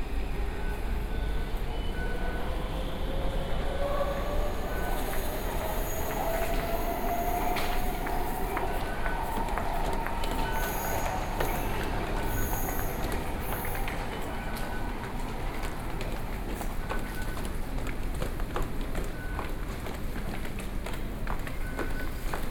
Zhongxiao Xinsheng Station, Taipei City - in the MRT station